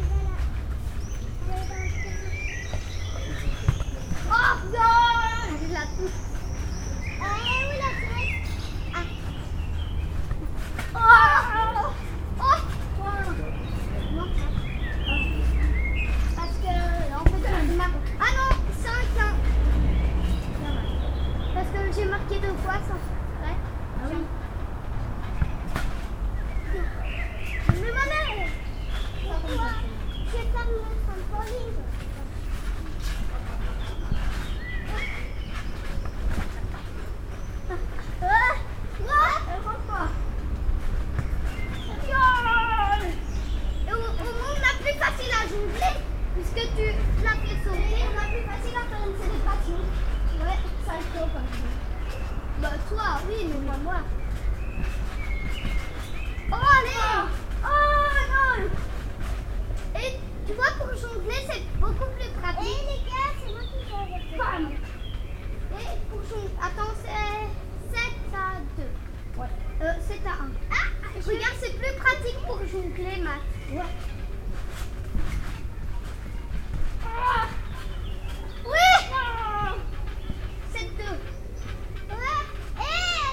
Children are playing with a trampoline in the garden. It's a saturday evening and all is quiet.
Mont-Saint-Guibert, Belgium, 21 May 2016, 20:40